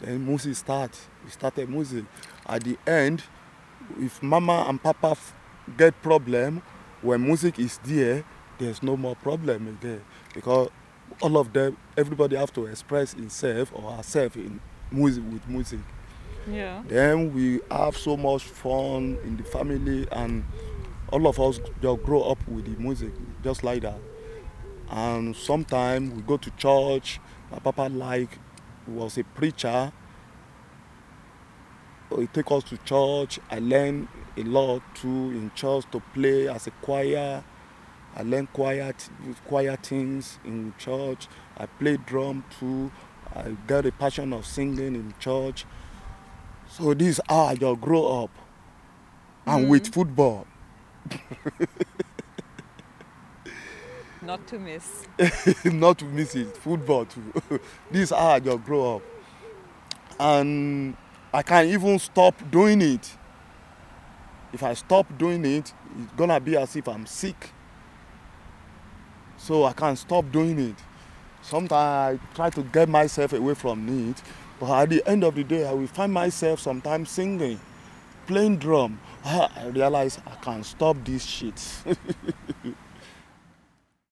Nordring, Hamm, Germany - To be here as a black man....
We are sitting with Yemi under a tree in the “Nordring”, a city park. After the first couple of minutes talking and recording, it begins to rain heavily. We find rescue in a little wood house on the playground nearby… Nigerian artist, drummer, educator, cultural producer and activist, Yemi Ojo, now at home in Germany, tells us where he’s coming from… His “cultural baggage”, his drumming and music, was and is his key in building a new life here and now …
(this recording was later remixed in the radio piece FREEWAY MAINSTREAM broadcast on WDR-3 Studio Akustische Kunst in May 2012)